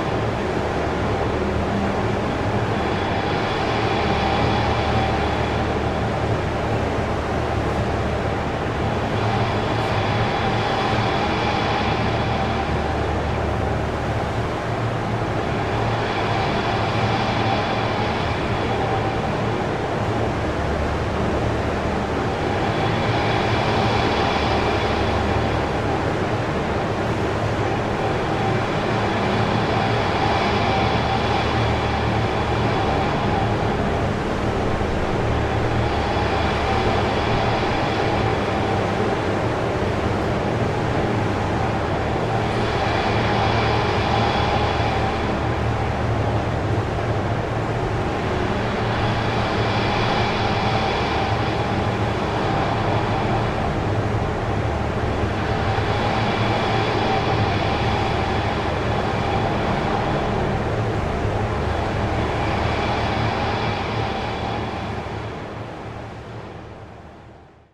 city water pumping station, Torun Poland
pump sounds of the city water supply
4 April 2011, 13:00